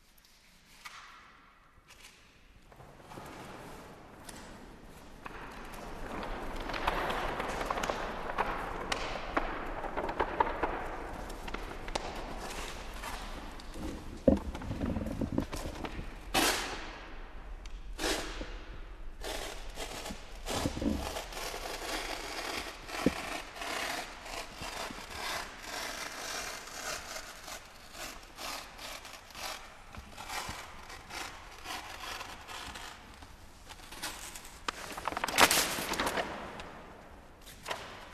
FAVU, údolní, Brno, Česká republika - DESTRUKCE - studentský workshop
záznam z dílny na FAMU o konstruktivní destrukci. verze 1.